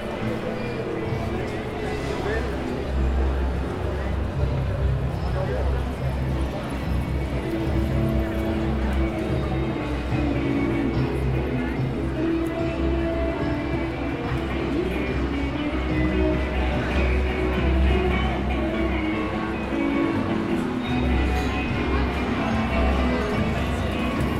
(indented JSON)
{
  "title": "Piazza del Duomo -Milano - Solstizio d'estate, festa della musica",
  "date": "2015-06-21 21:46:00",
  "description": "Persone in piazza, musiche provenienti da più direzioni, spazio ampio",
  "latitude": "45.46",
  "longitude": "9.19",
  "altitude": "125",
  "timezone": "Europe/Rome"
}